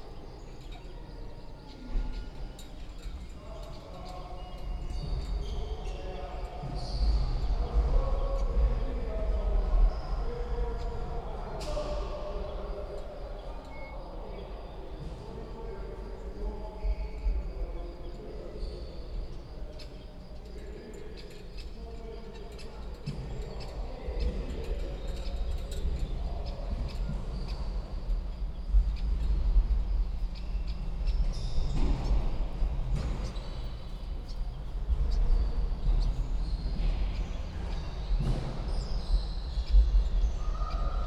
Maribor, Biotech school - morning sports
Maribor Biotech school, students practising in the morning, school yard ambience.
(SD702, DPA4060)